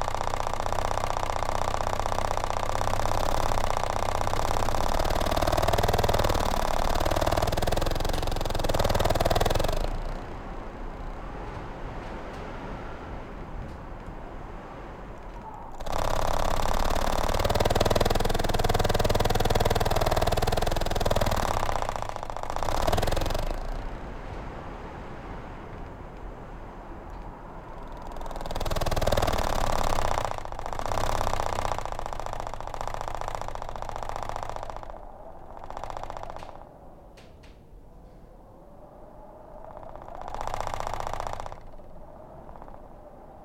Hálsasveitarvegur, Iceland - Cold wind through garage door
Cold wind through garage door.
5 June 2019, 15:00